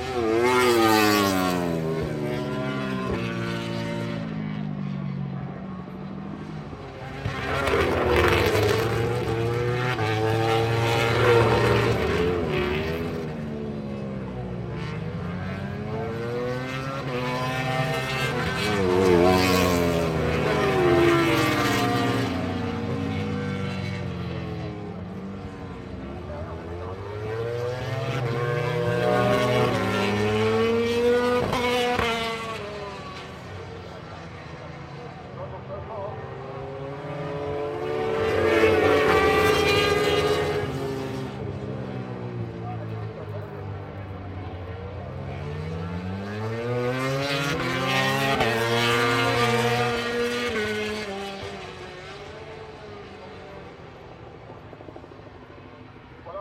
Donington Park Circuit, Derby, United Kingdom - British Motorcycle Grand Prix ... MotoGP ... FP3 ...
British Motorcycle Grand Prix ... MotoGP ... FP3 ... commentary ... Donington ... one point stereo mic to minidisk ...
August 2005